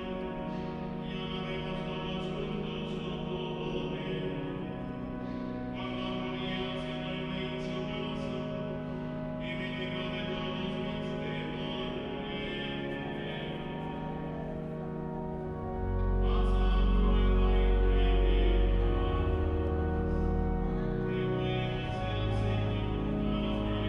Catedral Metropolitana, Ciudad de México, D.F., Mexico - Escorted Out of a Latin Mass
Recorded with a pair of DPA4060's and a Marantz PMD661
6 April 2016